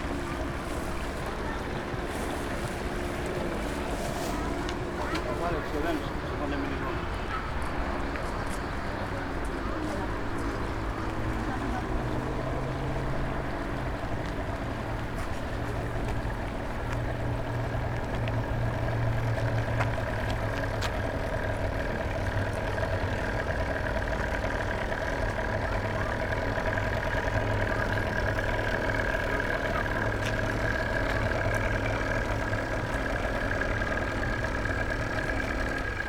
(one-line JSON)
{"title": "Nea Fokea, Chalkidiki, Greece - wharf", "date": "2014-08-05 20:39:00", "description": "Wharf (Nea Fokea Chalkidiki)", "latitude": "40.13", "longitude": "23.40", "altitude": "4", "timezone": "Europe/Athens"}